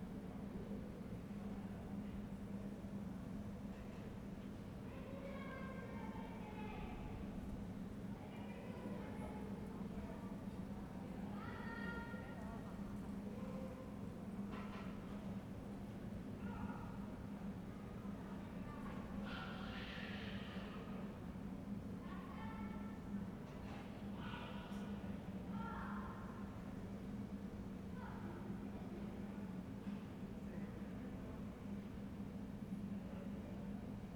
Ascolto il tuo cuore, città. I listen to your heart, city. Several chapters **SCROLL DOWN FOR ALL RECORDINGS** - Round noon with sun and dog in the time of COVID19 Soundscape
"Round noon with sun and dog in the time of COVID19" Soundscape
Chapter XXV of Ascolto il tuo cuore, città
Saturday March 22th 2020. Fixed position on an internal terrace at San Salvario district Turin, eighteen days after emergency disposition due to the epidemic of COVID19.
Start at 11:41 a.m. end at 00:43 a.m. duration of recording 1h'01’30”.
Torino, Piemonte, Italia, 28 March 2020, 11:41